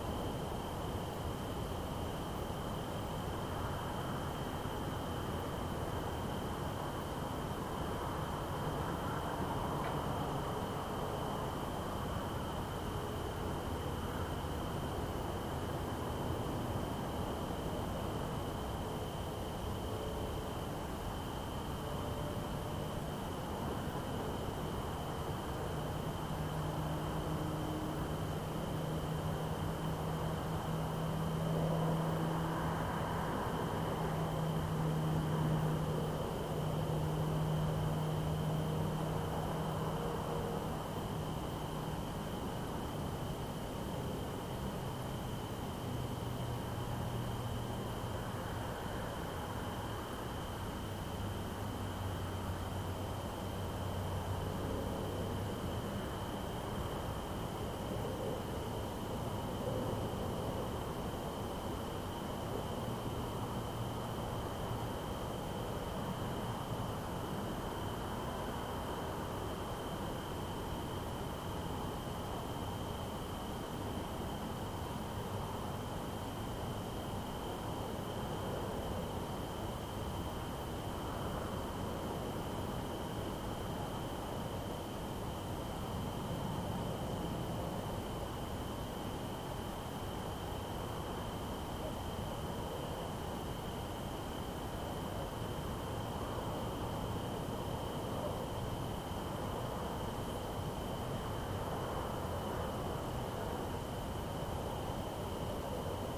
Mijas, Prowincja Malaga, Hiszpania - Night in Mijas
Late night in Mijas, with crickets and nearby highway as the leads. Recorded with Zoom H2n.